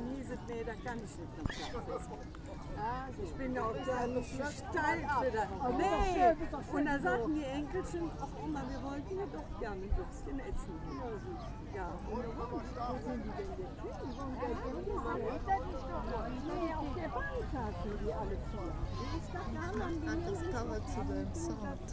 rurberg, lake promenade, seasonal public ministry celebration
at the seasonal public ministry celebration. a local brass orchestra performing and conversation of people
soundmap nrw - social ambiences and topographic field recordings
Simmerath, Germany, 28 June